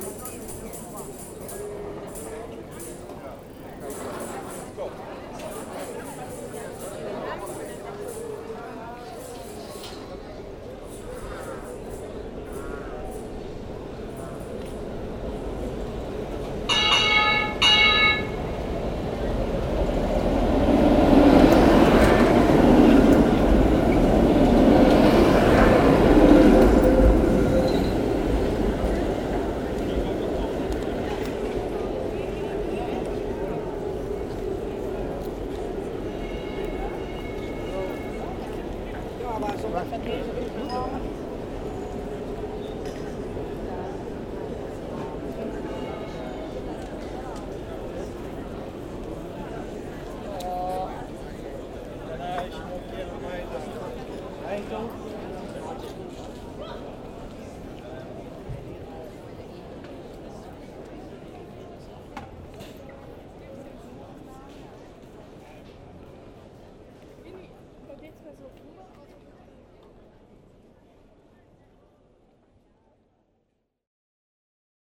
On a tight curve, two tramways circulate into the Groenplaats station.
Antwerpen, Belgium, 4 August